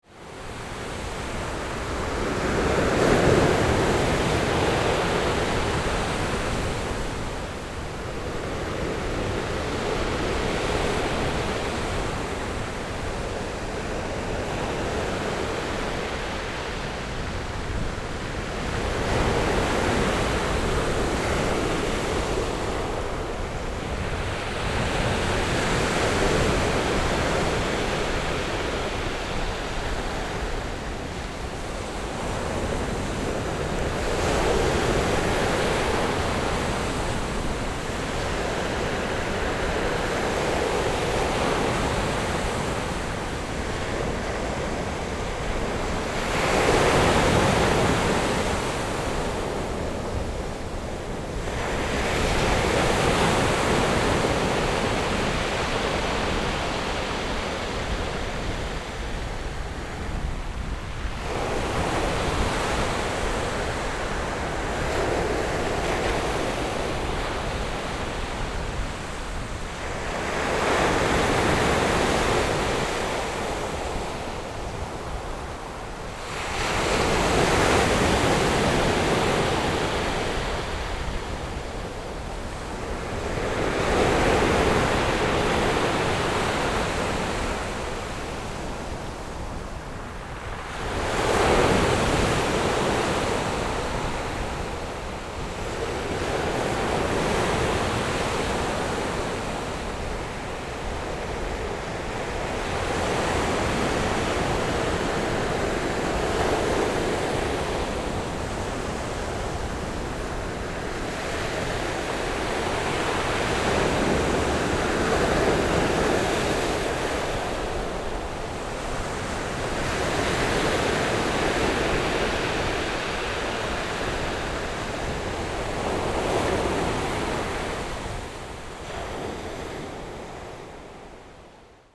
崇德村, Sioulin Township - Sound of the waves
Sound of the waves, The weather is very hot
Zoom H6 MS+Rode NT4